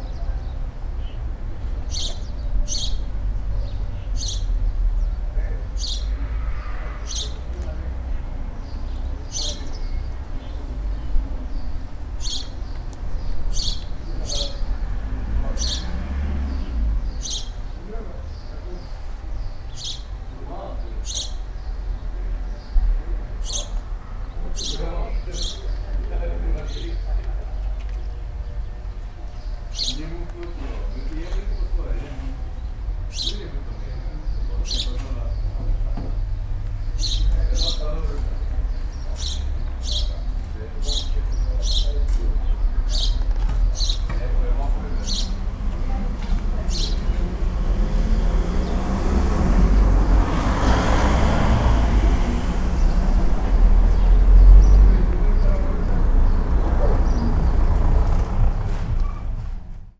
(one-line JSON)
{"title": "Kowalewskiego, Choczewo, Polska - man talking behind a fence", "date": "2019-06-15 11:16:00", "description": "man working a hammer, trying to shatter wall of an old garage. he gives up after a few hits. a group of man talking behind the fence. (roland r-07)", "latitude": "54.74", "longitude": "17.89", "altitude": "68", "timezone": "Europe/Warsaw"}